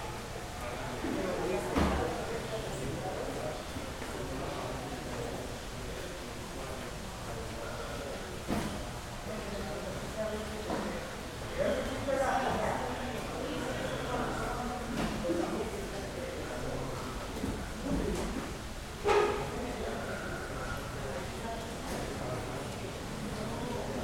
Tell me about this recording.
Biblioteca Universidad de Medellín, día soleado. Sonido tónico: Conversaciones lejanas. Señal sonora: Conversación cercana, sillas, pito y gritos lejanos de partido de fútbol. Tatiana Flórez Ríos - Tatiana Martínez Ospino - Vanessa Zapata Zapata